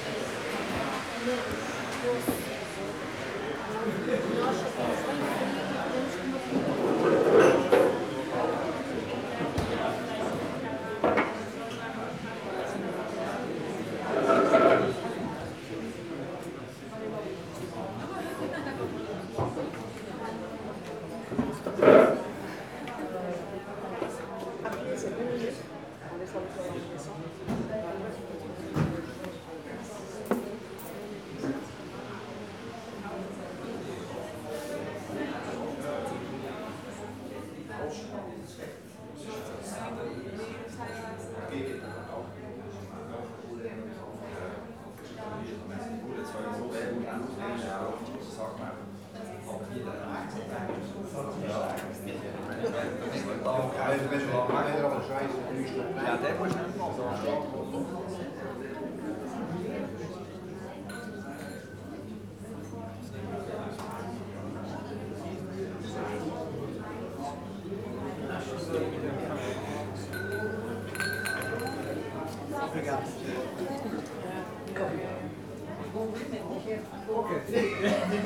Porto, Taylor's wine factory, tasting room - taylor's tasting room
visitors sitting at tables, tasting porto wine samples. talking to waiters who explain the details about each bottle. tourists of many different countries. sort of high-class atmosphere.